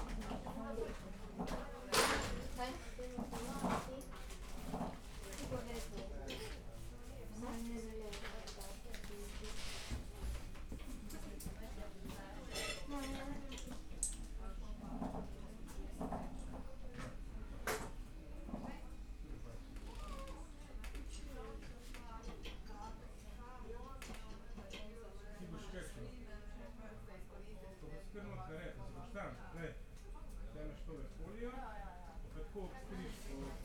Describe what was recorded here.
preparation of lunch, during Polenta festival, in Natascha's China Shop, a place for artistic and other activities. during the polenta festival, people gather here all day in a friendly athmosphere. (SD702 DPA4060)